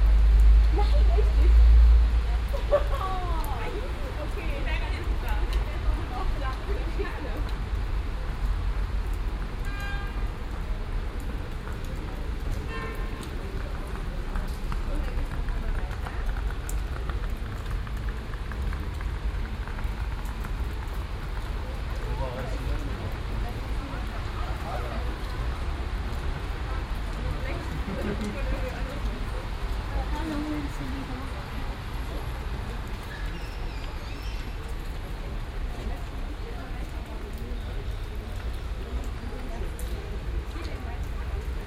Löhrrondell, square, Koblenz, Deutschland - Löhrrondell 2
Binaural recording of the square. Second of several recordings to describe the square acoustically. Here is a dialogue between some people audible, someone tries to sell something.
Koblenz, Germany, May 2017